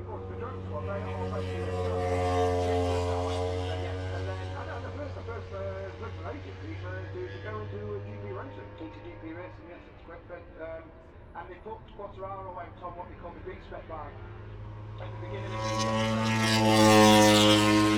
british motorcycle grand prix 2019 ... moto grand prix free practice four ... and commentary ... copse corner ... lavalier mics clipped to sandwich box ...